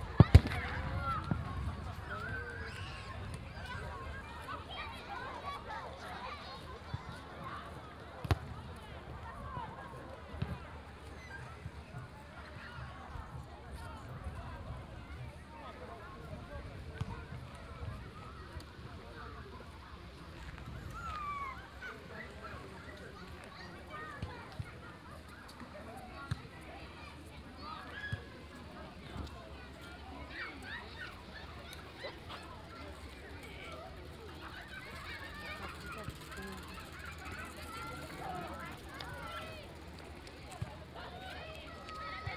Cichowo - at the beach of Cichowo lake
(binaural) sounds from around the beach and patches of grass at the Cichowo lake.
2 August 2014, 16:51